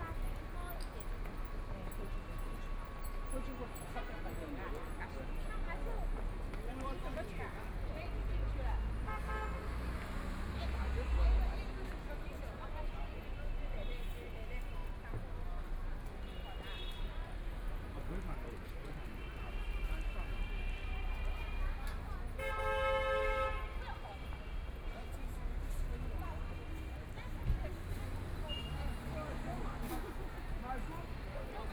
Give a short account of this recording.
Very large number of tourists, Walking through a variety of shops, Traffic Sound, Binaural recording, Zoom H6+ Soundman OKM II